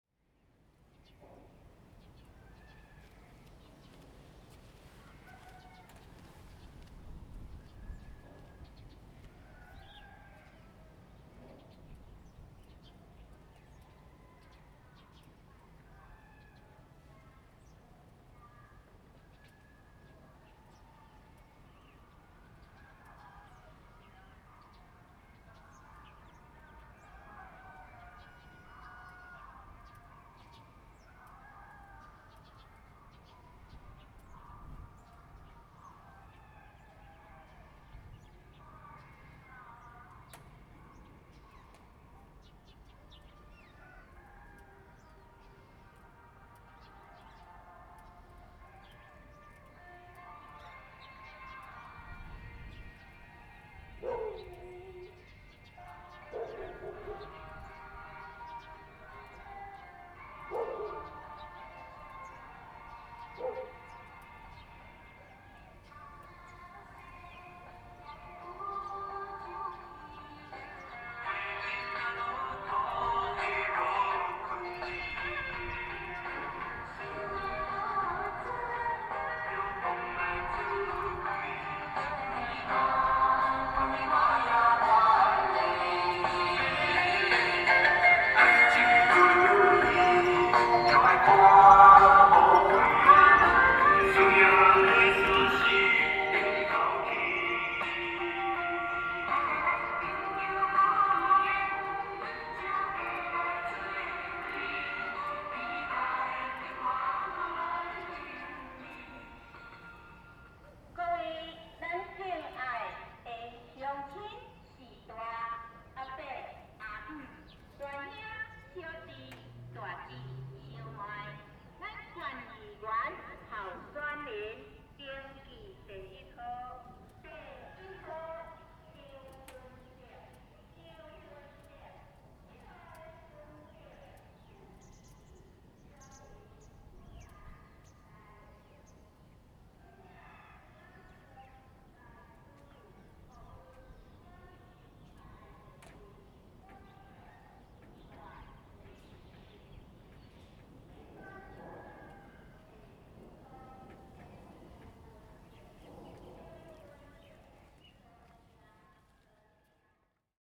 睿友學校, Jinsha Township - Small Square
Small village, Election propaganda vehicles, Dogs barking, Chicken sounds
Zoom H2n MS +XY